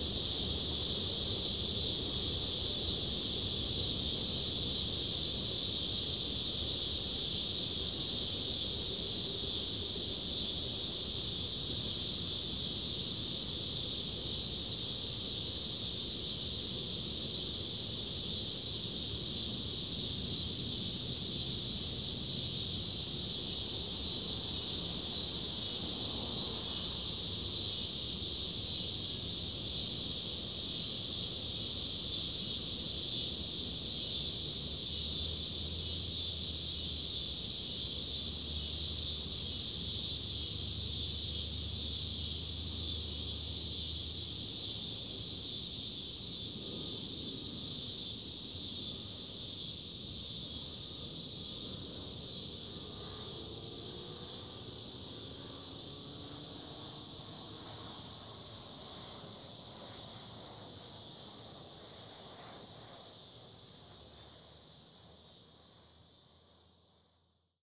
{"title": "Des Plaines, IL, USA - Cicada Swarm (with trains & planes)", "date": "2015-07-21 14:00:00", "description": "Recorded just a few miles from Chicago's O'Hare airport, this clip showcases a huge swarm of cicadas buzzing away in the middle of a Summer afternoon. This was made on a side street near a large schoolyard on a hot July day with little wind or interference. I used a Tascam Dr-07 with wind screen. You can also hear a nearby train and a few planes approaching the airport.", "latitude": "42.03", "longitude": "-87.91", "altitude": "197", "timezone": "America/Chicago"}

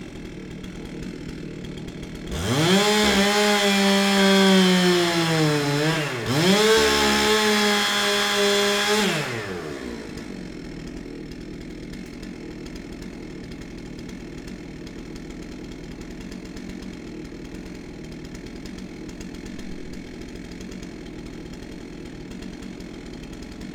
{"title": "Berlin Bürknerstr., backyard window - the end of a tree", "date": "2017-01-09 12:10:00", "description": "Berlin, Bürknerstr.9, my backyard. Workers cutting down a tree. Final cut. It was no a good-looking one, but has been part of my daily view, a place for birds etc., listened many autumns to its falling leaves. Gone, causes me sort of pain. Start of a renovation process. This ol' messy backyard goes antiseptic...\n(Sony PCM D50, Primo EM172)", "latitude": "52.49", "longitude": "13.42", "altitude": "45", "timezone": "GMT+1"}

